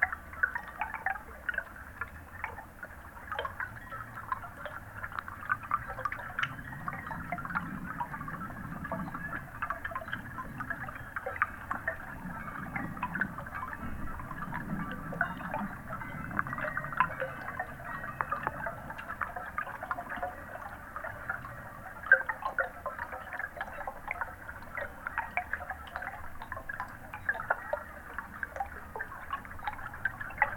not so deep in the pond - you can hear the chimes outside and steps of people on the bridge